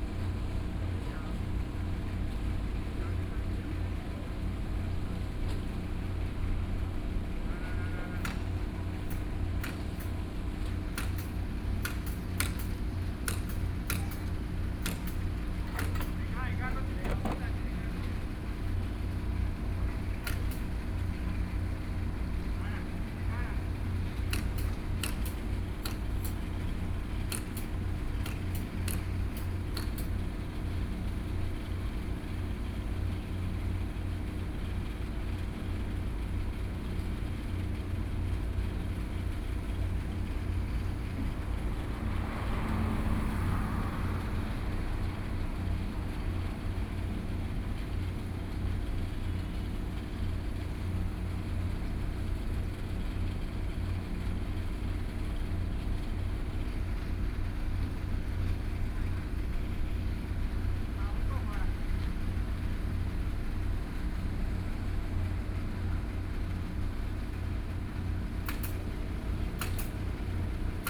媽興港, Su'ao Township - Next to the pier
Next to the pier, Hot weather, Traffic Sound